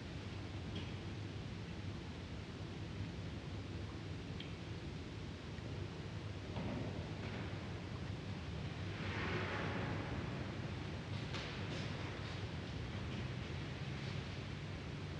St. Sebastian, Ackerstraße, Berlin, Deutschland - St. Sebastian church, Ackerstraße, Berlin - Waiting for the mass
St. Sebastian church, Ackerstraße, Berlin - Waiting for the mass.
[I used an MD recorder with binaural microphones Soundman OKM II AVPOP A3]